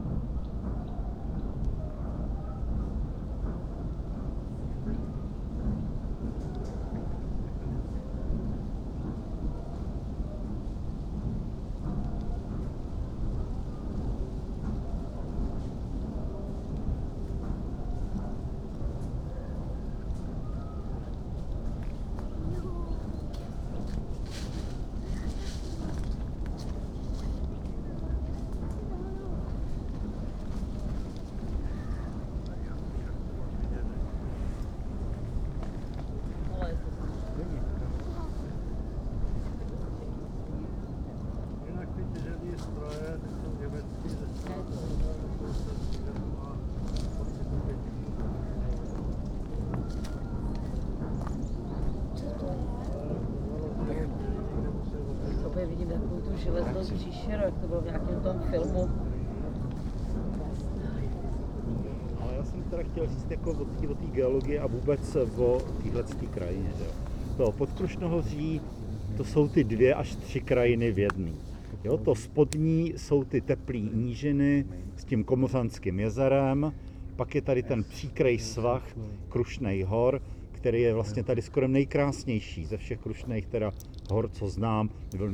Místo v kopcích nad Zámkem Jezeří, kde jsou údajně rituální paleolotické kameny. Václav Cílek mluví o proměnách krajiny během cesty pro účastníky Na pomezí samoty